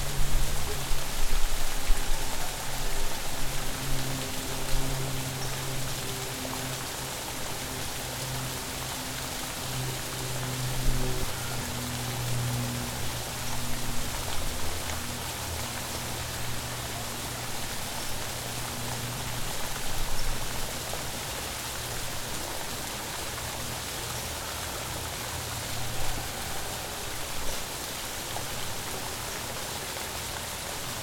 Cra., Medellín, Antioquia, Colombia - Ambiente bloque 18 UdeM
Descripción: Bloque 18 de la Universidad de Medellín.
Sonido tónico: fuentes y pájaros cantando.
Señal sonora: personas riendo
Técnica: grabación con Zoom H6 y micrófono XY
Grupo: Luis Miguel Cartagena Blandón, María Alejandra Flórez Espinosa, María Alejandra Giraldo Pareja, Santiago Madera Villegas y Mariantonia Mejía Restrepo
Región Andina, Colombia, 24 September 2021